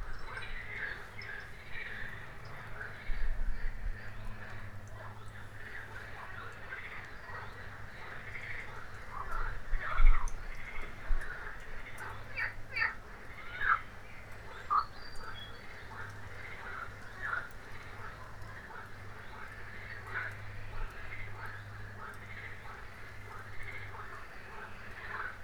Lobosstraat, Halen, Belgium - Warme Lente - Frogs
Afternoon walk in the woods of Zelem on a sunny day in April.
You can hear frogs, birds, mosquitos and the wind.
Recorded with Zoom H1
22 April 2019, ~16:00